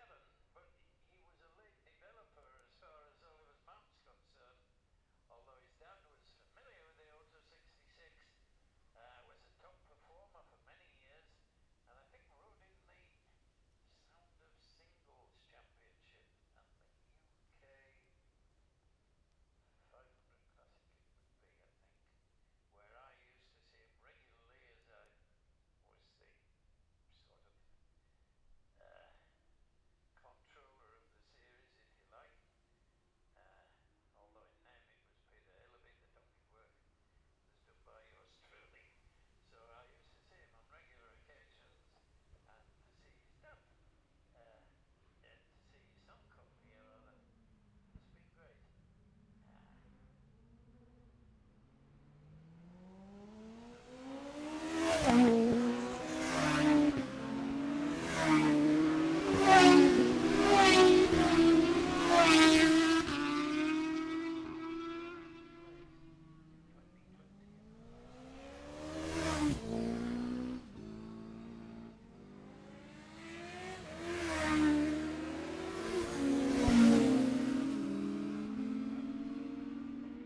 Jacksons Ln, Scarborough, UK - gold cup 2022 ... classic s'bikes practice ...
the steve henshaw gold cup ... classic superbikes practice ... dpa 4060s on t'bar on tripod to zoom f6 ...